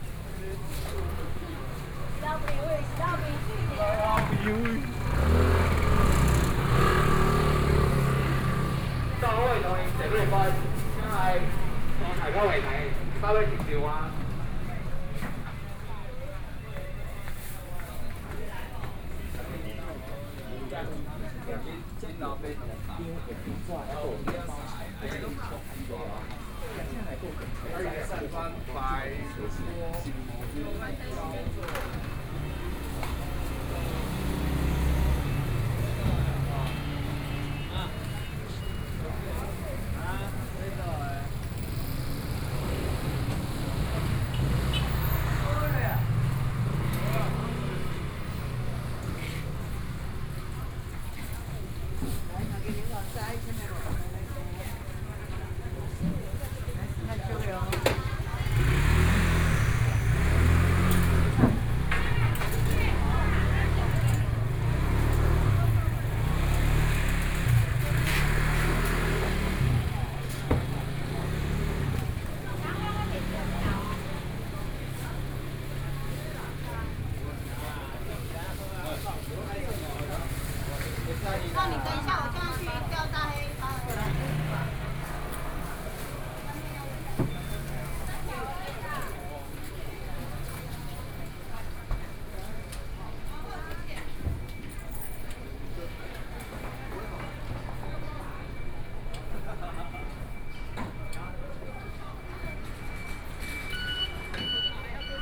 第二果菜批發市場, Taipei City - Wholesale
walking in the Fruit and vegetable wholesale market, Traffic Sound
Binaural recordings